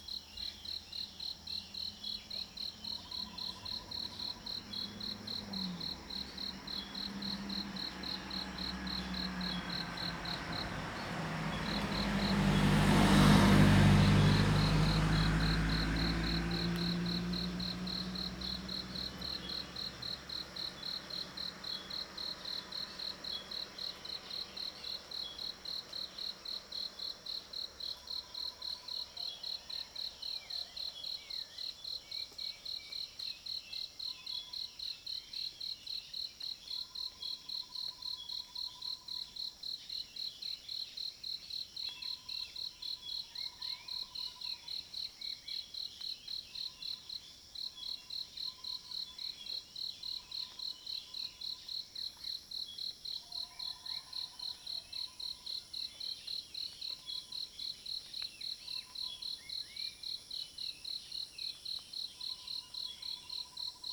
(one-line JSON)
{"title": "草湳溼地, 埔里鎮桃米里, Taiwan - Sound of insects", "date": "2016-07-13 05:05:00", "description": "early morning, Sound of insects, birds sound\nZoom H2n MS+XY", "latitude": "23.95", "longitude": "120.91", "altitude": "584", "timezone": "Asia/Taipei"}